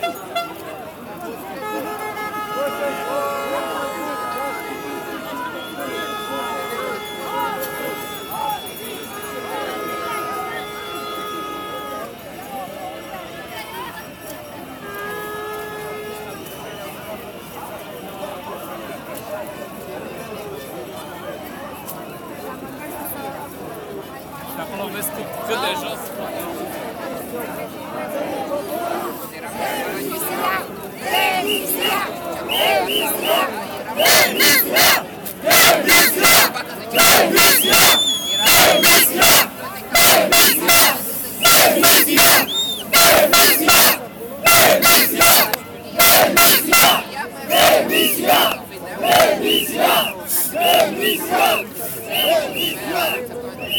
9th day of protests by Romanians dissatisfied with the president.
Romania